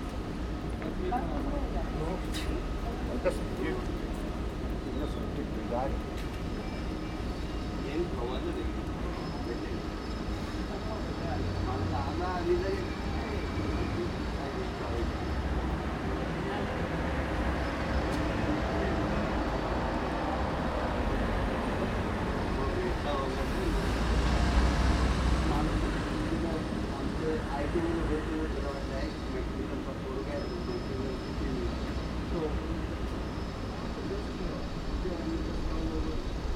Solingen, Deutschland - Rund um den Busbahnhof / Around the bus station
Geräusche rund um den Busbahnhof in Ohligs: Stimmen, Busse, PKW ein Zug, ein Presslufthammer an der Brücke 180 m südöstlich. / Noise around the bus station in Ohligsberg: voices, buses, cars, a train, a jackhammer on the bridge 180 meters to the southeast.